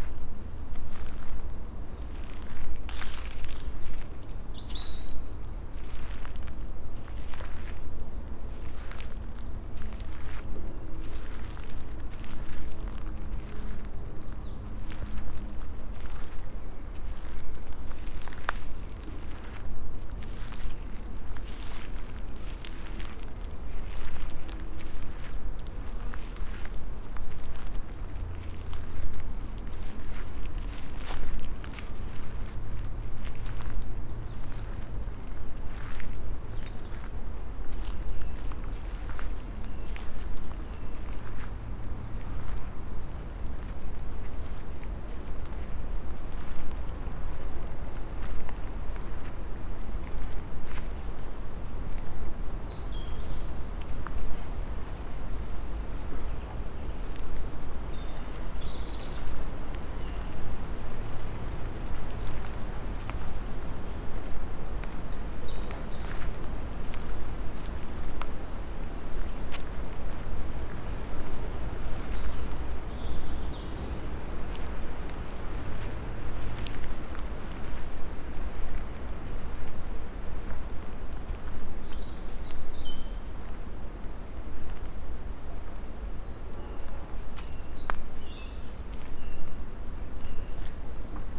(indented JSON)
{
  "title": "dale, Piramida, Slovenia - slow walk",
  "date": "2013-04-24 17:16:00",
  "description": "dry leaves, wind, birds, small dry things falling down from tree crowns, distant creaks, train ...",
  "latitude": "46.58",
  "longitude": "15.65",
  "altitude": "379",
  "timezone": "Europe/Ljubljana"
}